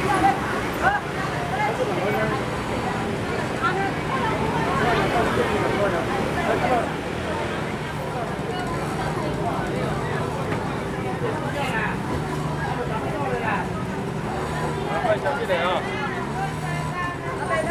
Ln., Zhongzheng Rd., Zhonghe Dist. - Walking in the market
Walking in the market
Sony Hi-MD MZ-RH1+Sony ECM-MS907